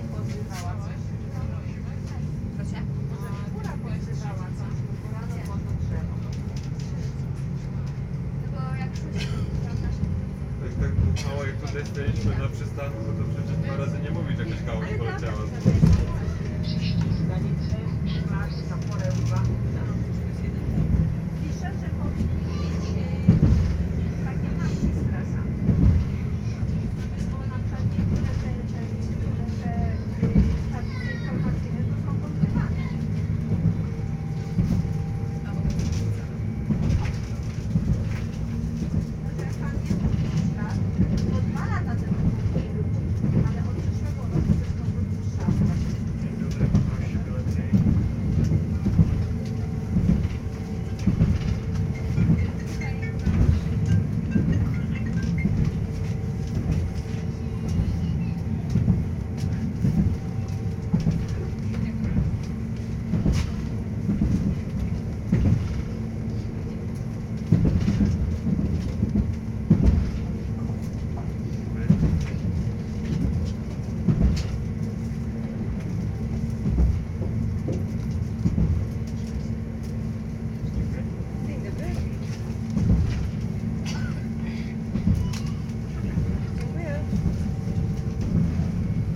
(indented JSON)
{
  "title": "Szklarska Poręba, Poland - (895) Train ride",
  "date": "2022-02-17 12:30:00",
  "description": "Binaural recording of a train ride from Szklarska Poręba Górna -> Szklarska Poręba Jakuszyce.\nRecorded with DPA 4560 on Sound Devices MixPre-6 II.",
  "latitude": "50.84",
  "longitude": "15.51",
  "altitude": "719",
  "timezone": "Europe/Warsaw"
}